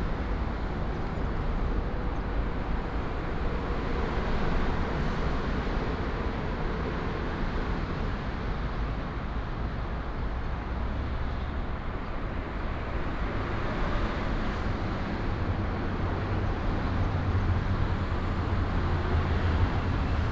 Qianjia Rd., East Dist., Hsinchu City - Under the elevated fast road
Under the elevated fast road, Traffic sound, Binaural recordings, Sony PCM D100+ Soundman OKM II
12 September, 11:40